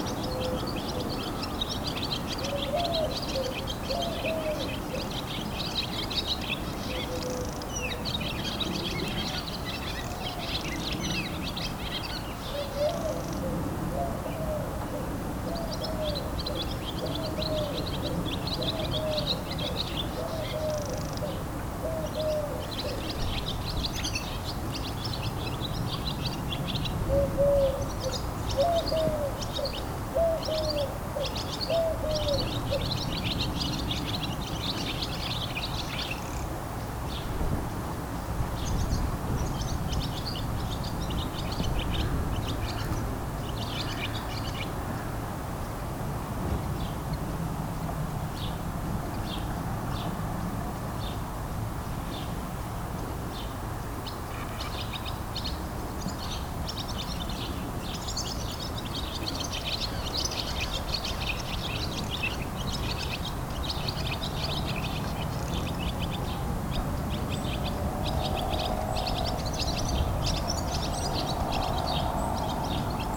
Chaumont-Gistoux, Belgique - Swallows
Swallows concert near an old farm. It was the end of the nap, they all went in the wind just after the recording.